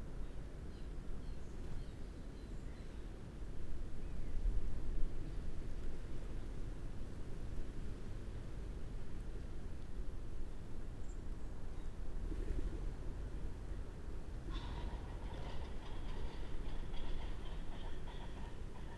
Green Ln, Malton, UK - pheasants leaving roost ...
pheasants leaving roost ... dpa 4060s in parabolic to MixPre3 ... bird calls from ... blackbird ... wren ... robin ... red-legged partridge ... crow ... redwing ... birds start leaving 12.25 ... ish ... much wind through trees ...